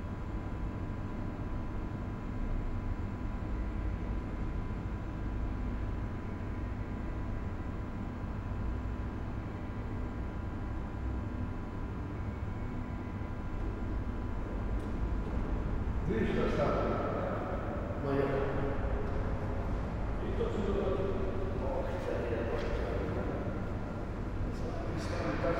Maribor, station hall - night time
Maribor, Slovenia